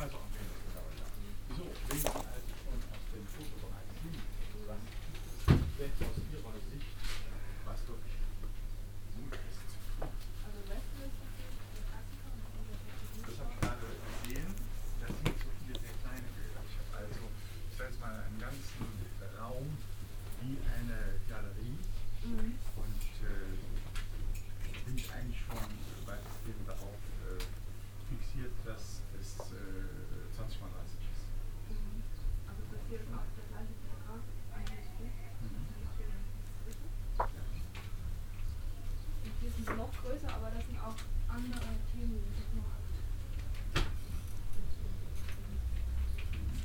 cologne, apostelnstr, buchhandlung koenig - koeln, apostelnstr, buchhandlung könig 02
morgens in der buchhandlung, kunstbücher blättern im hintergrund kundengespräch und das verschieben einer bibliotheksleiter
soundmap nrw - social ambiences - sound in public spaces - in & outdoor nearfield recordings